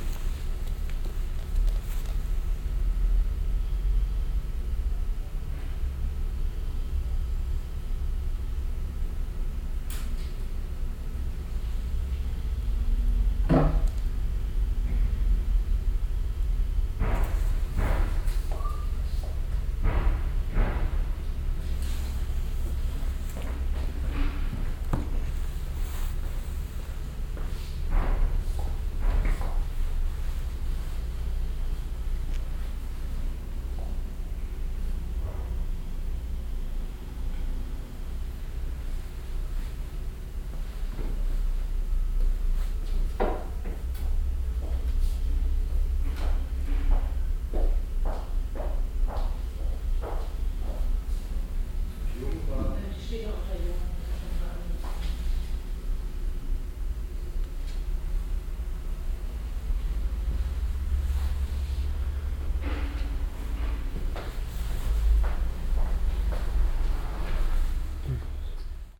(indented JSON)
{
  "title": "cologne, mainzerstrasse-ubierring, buchhandlung",
  "date": "2008-09-02 17:28:00",
  "description": "mittags in der buchhandlucng, schritte auf steinboden, blättern von bücherseiten, gespräche des personals\nsoundmap nrw - social ambiences - sound in public spaces - in & outdoor nearfield recordings",
  "latitude": "50.92",
  "longitude": "6.96",
  "altitude": "52",
  "timezone": "Europe/Berlin"
}